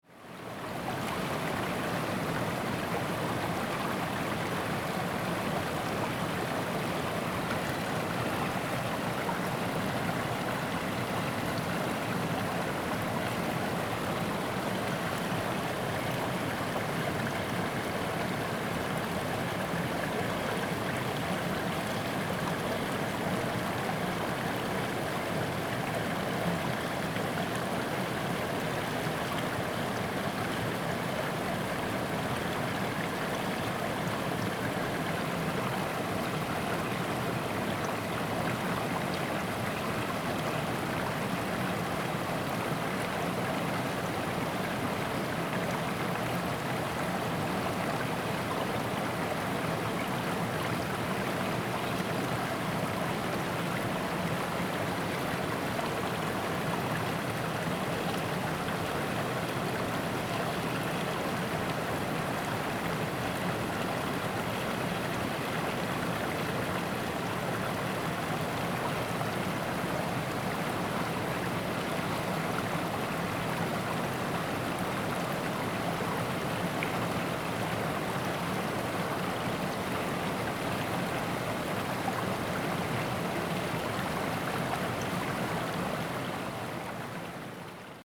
種瓜坑溪, 埔里鎮 Nantou County, Taiwan - stream
Brook, In the river, stream
Zoom H2n MS+XY